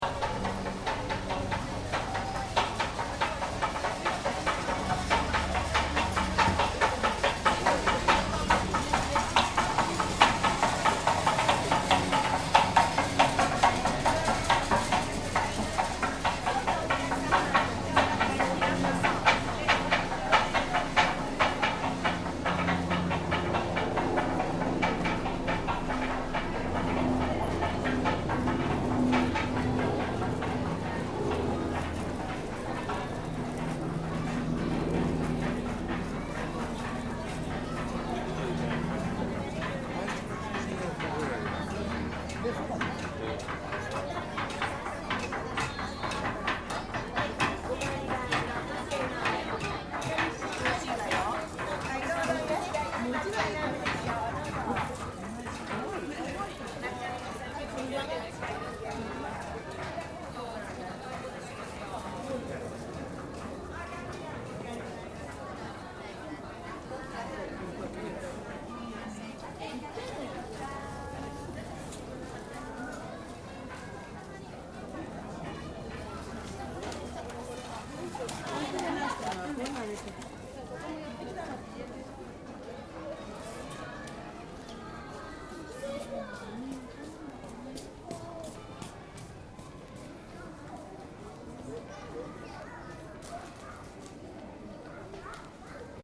Nakamise-dori, Kawasaki Daishi
One of the ‘100 Soundscapes of Japan’ is the small street that leads to Kawasaki Daishi, a famous temple that travellers along the Tōkaidō would often take the time to visit. I happened to visit during a quieter day it seems, but the sweets being hand-made on either side of me still provided a unique listening experience as I approached the large temple. ‘Rat-tat-ratatat-rat-tat-ratatat...’